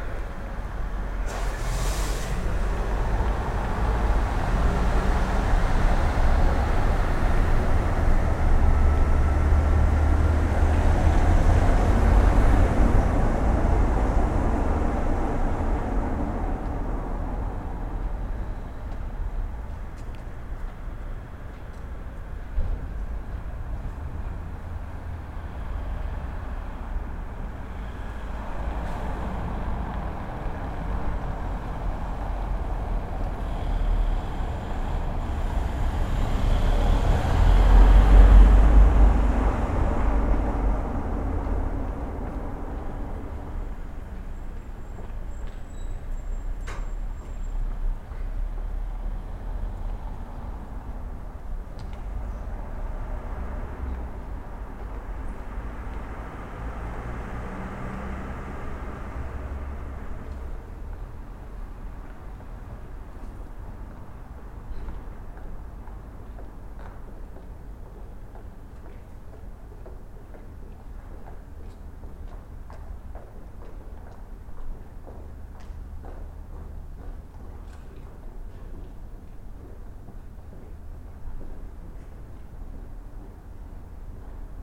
Celetna, Prague - From my hotel room window, Celetna, Prague
9am, street sounds recorded from my 1st floor hotel room window. Just as it started to lightly snow.